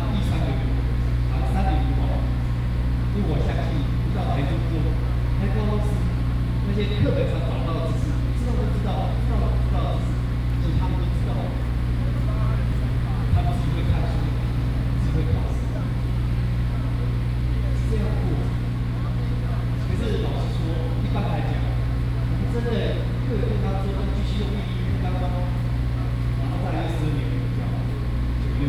Protest site
Please turn up the volume a little. Binaural recordings, Sony PCM D100+ Soundman OKM II
Zhongzheng District, Taipei City, Taiwan, 1 August 2015